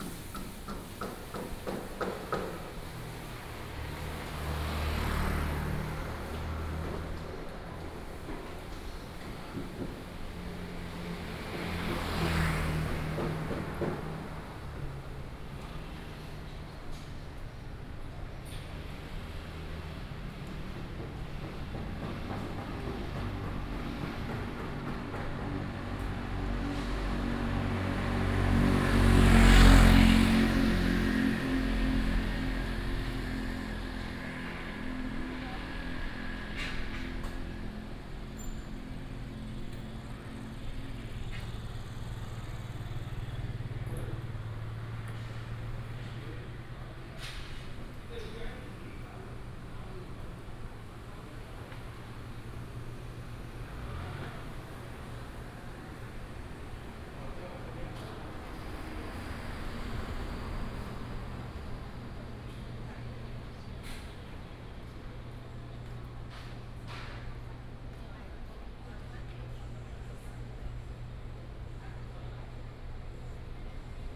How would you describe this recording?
walking in the Street, traffic sound